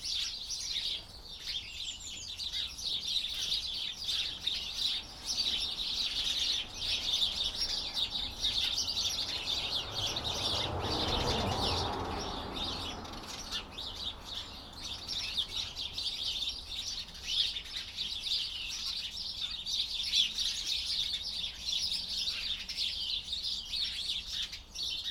A recording of the flock of house sparrows that congregate in a large honeysuckle that sprawls over a brick shed at the rear of the cottages. A tawny owls calls, the geese occasionally honk at the top of the garden and the blue and great tits squabble on the feeders